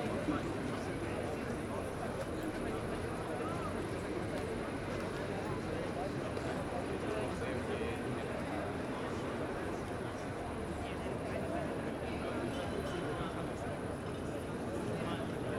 Boxhagener Platz, Berlin, Germany - Friday Night Summer Drinks in the Park
Recorded at 22:30 with a Zoom H5 on a hot Friday night. Many people in the park sitting in darkness (the park is not lit at all) and drinking.
Someone comes with a shopping trolly to collect bottles so they can claim the recycling refund.
The microphones were facing into the green area of the park on the other side of the thin, straight, gravel walkway.